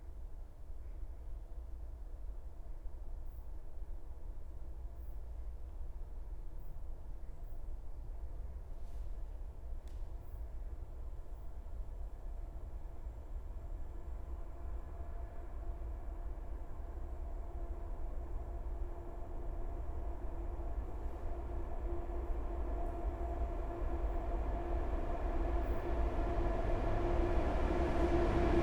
Poznan, Naramowice distruct, Rubiez, viaduct - tunel in the viaduct
pedestrian tunel in the viaduct over Rubiez street. Only freight trains use the viaduct. They normally travel slow due to their weight. The recording was made inside the tunel so the passing train sound is muffled. You can hear it's horn even after almost a minute after it passed the viaduct. (roland r-07)